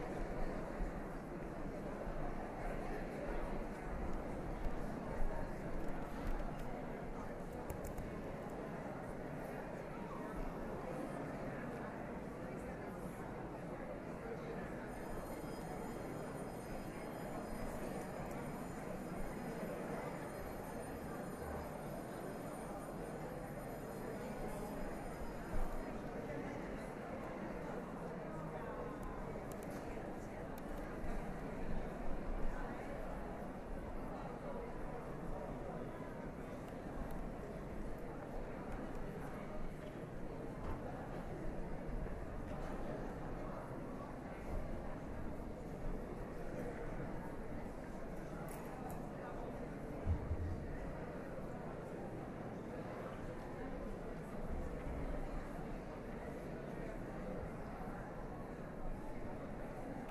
Teatro Biondo Palermo (romanlux)
Pubblico allintervallo (edirol R09HR)